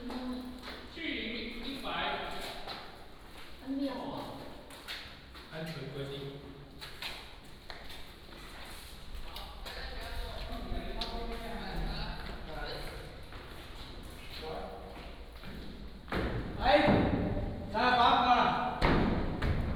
walking in the Abandoned military sites
Liouciou Township, 肚仔坪路2號